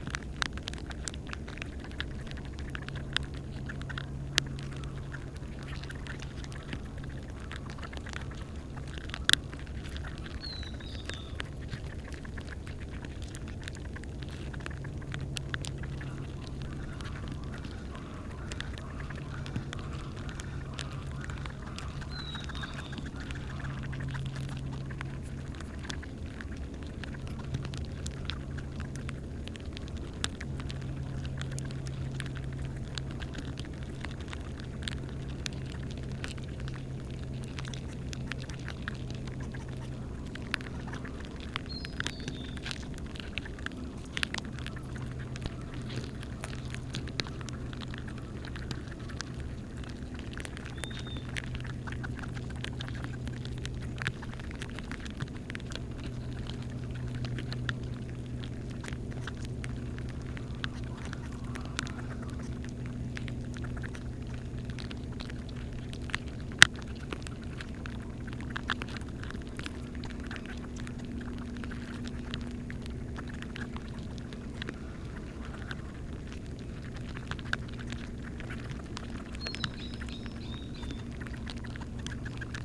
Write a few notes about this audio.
This recording was taken at the entrance of an ant nest. There's no further edition of any kind. Zoom H2n with primo EM 172, For better audio quality and other recordings you can follow this link: José Manuel Páez M.